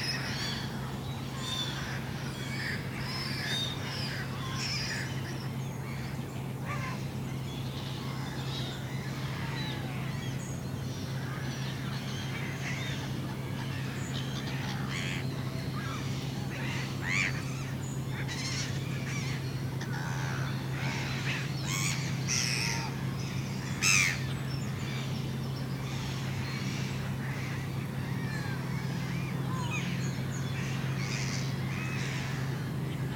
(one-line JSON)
{"title": "Léry, France - Seagulls", "date": "2016-09-20 06:40:00", "description": "Seagulls are discussing on the pond, early morning.", "latitude": "49.30", "longitude": "1.21", "altitude": "5", "timezone": "Europe/Paris"}